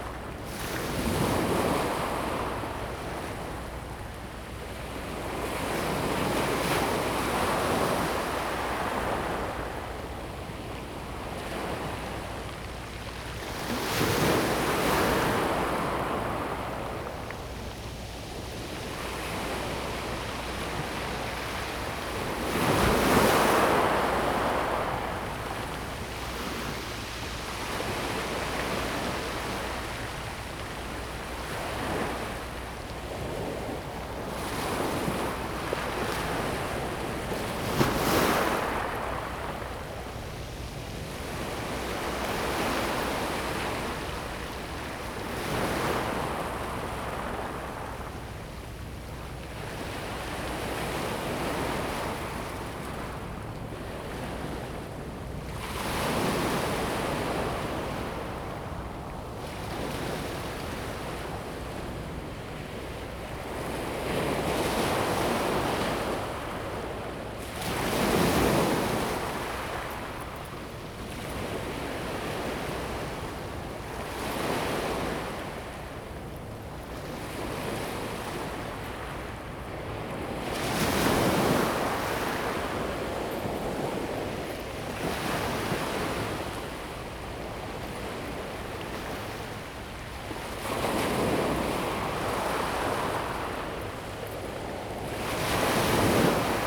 at the seaside, Sound waves
Zoom H2n MS+XY
New Taipei City, Taiwan, 5 April, 18:06